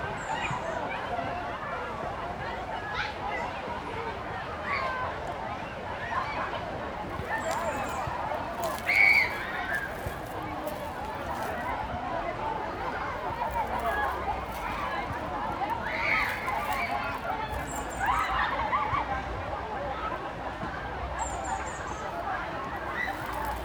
Gertrudstraße, Berlin, Germany - Enjoying open air swimming
Such beautiful warm weather - 28C, sun and blue sky. Kids, and others, enjoying open air swimming pools is one of Berlin's definitive summer sounds. Regularly mentioned as a favourite. The loudspeaker announcements reverberate around the lake.